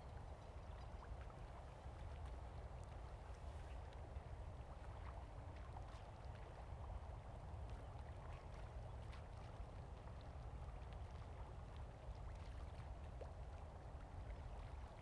西湖水庫, Lieyu Township - Next to the reservoir
Iron-wood, Next to the reservoir, Wind
Zoom H2n MS +XY